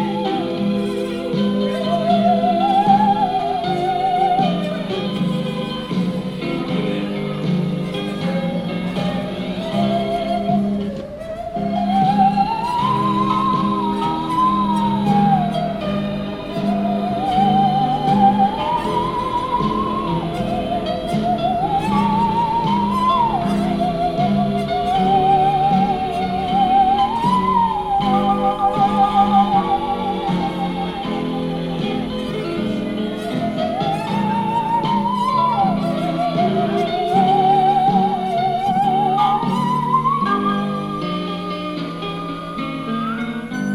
musician playing musical saw Pacific subway station NYC jrm rec